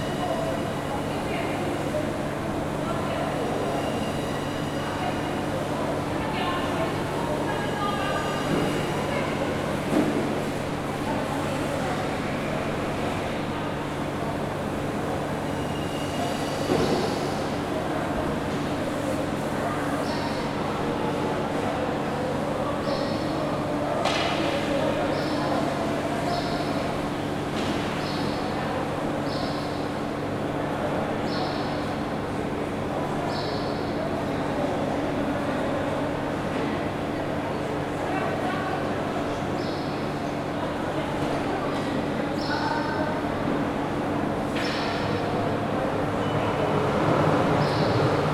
Inside the Ribeira Market, people, space resonance, vegetables and food chopping